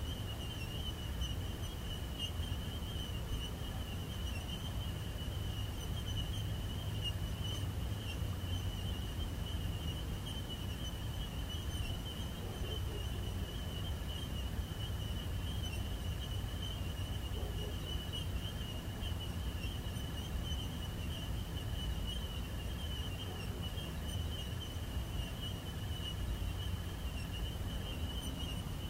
chez kakouc, entre deux
soirée chez kakouc le tisaneur au bout du monde